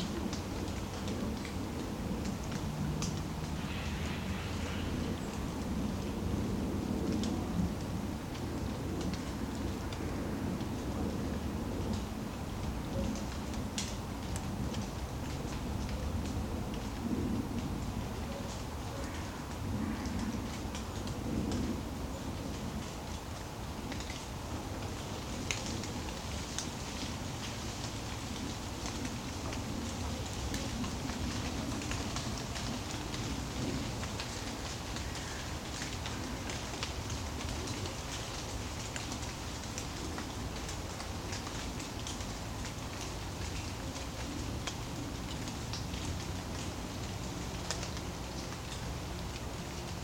Vilnius, Lithuania, listening to drizzle
standing in the autumn colored wood near big town and listening to drizzle...